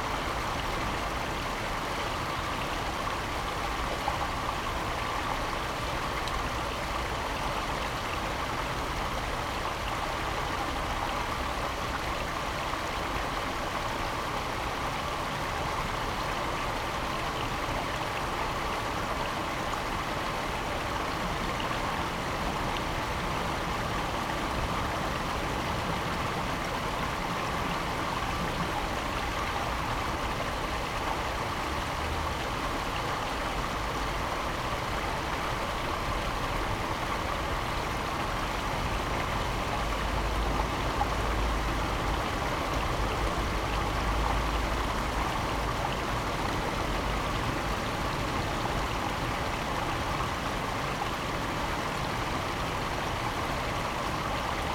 Berlin, Görlitzer Park - little artificial creek at bridge
little artificial creek in Görlitzer Park Berlin, near small bridge